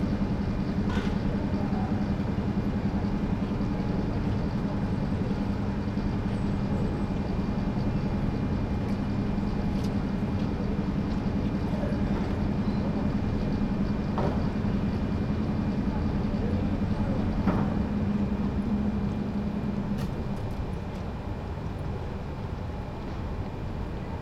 St. Petersburg, Russia - Finlyandsky railway station in St. Petersburg
I often go to this station. I like the sound of the station, they for me as a song.
Recored with a Zoom H2.